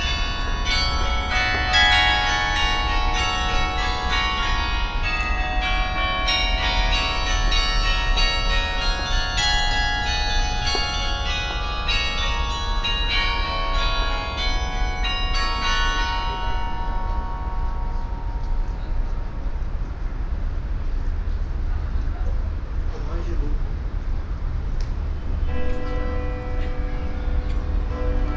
luxembourg, in front of cathedral notre dame
Standing in front of the Cathedrale Notre Dame. The sound of the passing by traffic and steps on the stairway to the church - hen the sound of the hour bell play of the church.
international city scapes and topographic field recordings